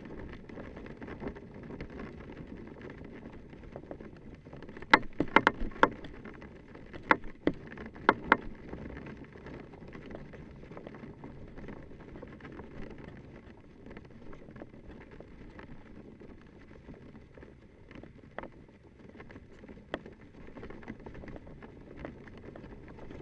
Gėlių g., Ringaudai, Lithuania - A small plastic flag-pole
Dual contact microphone recording of a small flag-pole near an entrance to a gas station store. The wind is turning the flag sideways, rotating a plastic pole in it's socket. Recorded using ZOOM H5.
20 April, 10:00